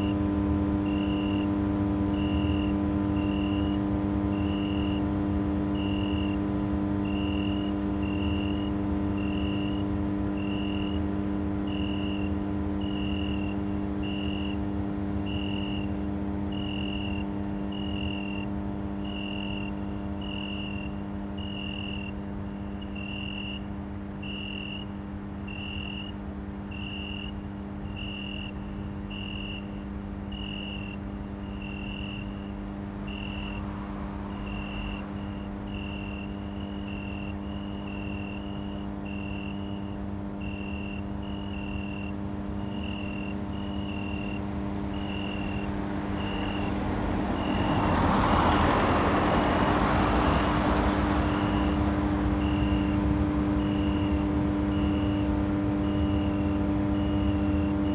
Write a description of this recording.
A very loud cricket and an electric transformer station for Lidl jamming in the night...